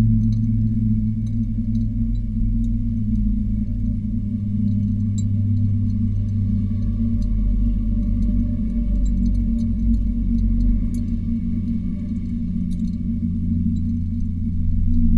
from/behind window, Mladinska, Maribor, Slovenia - glass bowl, frozen rain